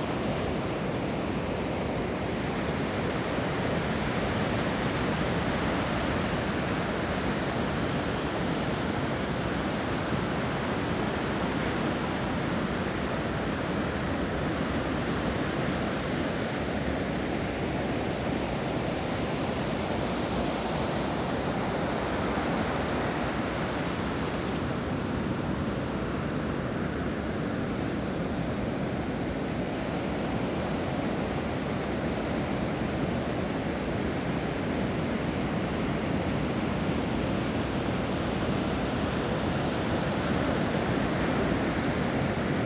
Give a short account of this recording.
Sound of the waves, Very hot weather, Zoom H6+ Rode NT4